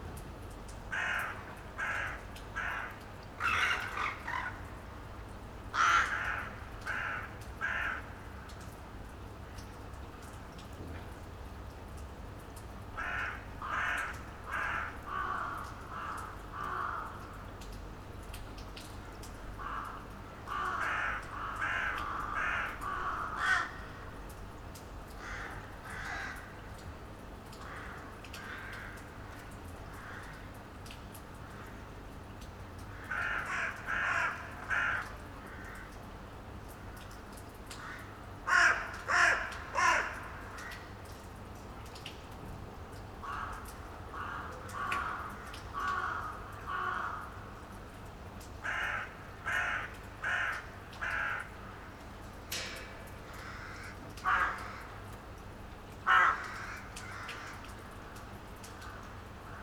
Sachsenstr., Karlsruhe, Deutschland - morning backyard ambience with crows
lots of crows gather in the morning on the roofs, weekend morning ambience in a backyard, raindrops
(Sony PCM D50)